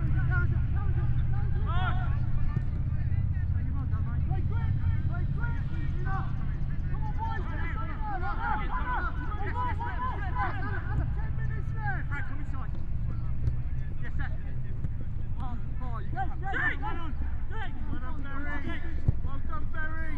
Reading Rd, Reading, UK - Woodcote and Stoke Row FC
Pre-season inter-club friendly match between Woodcote and Stoke Row FC main team and their reserve team played on the village green. Recorded using a Jecklin disk with two Sennheiser 8020s on a Sound Devices SD788T.
15 August, 19:50